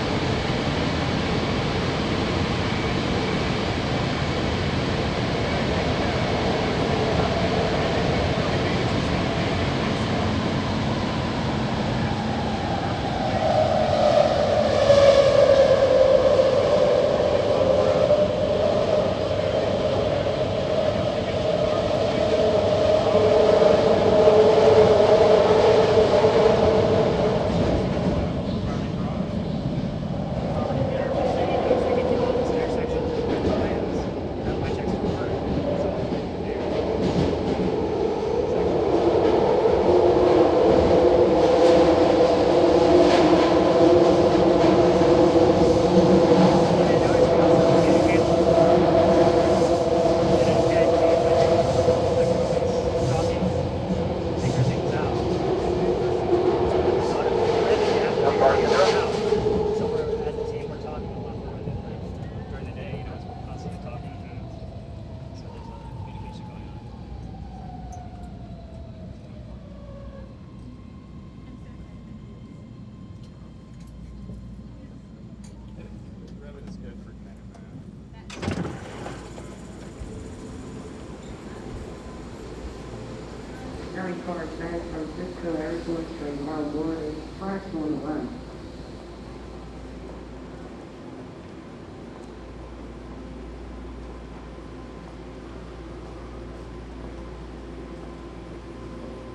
West Oakland Bart station /subway/ - ride through a tube towards San Francisco
approaching West Oakland Bart /subway/ station and a ride through a tube under the SF Bay towards The San Francisco
Oakland, CA, USA, 20 November 2010